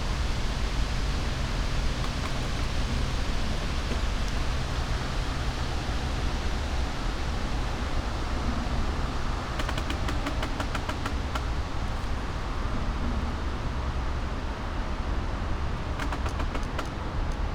poplar woods, Drava river, Slovenia - creaks, winds, distant traffic hum
Maribor, Slovenia, 20 August, ~20:00